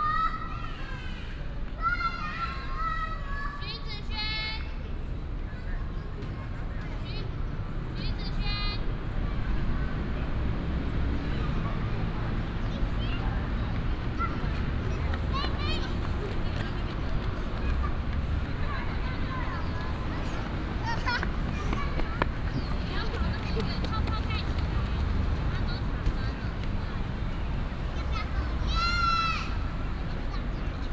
Gongxue N. Rd., South Dist., Taichung City - Child
Child, Small park, Traffic sound, sound of the birds